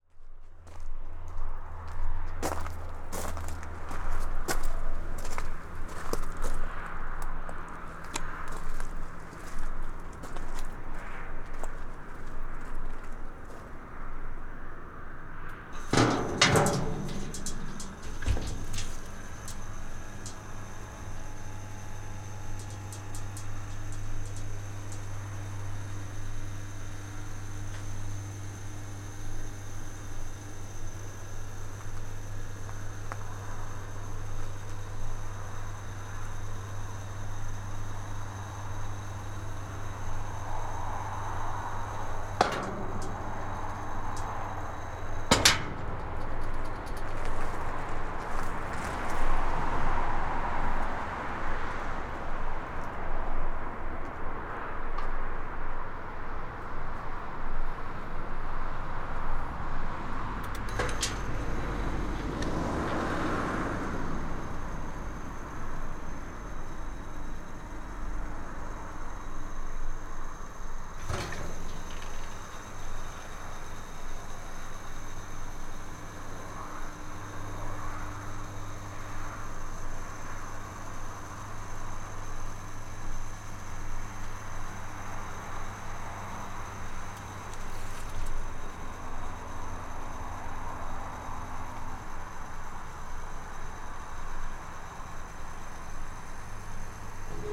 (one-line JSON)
{"title": "Niévroz, Rue Henri Jomain, electric gate", "date": "2011-12-24 18:31:00", "description": "Electric gate and a plane near the end.\nSD-702, Me-64, NOS.", "latitude": "45.82", "longitude": "5.07", "altitude": "184", "timezone": "Europe/Paris"}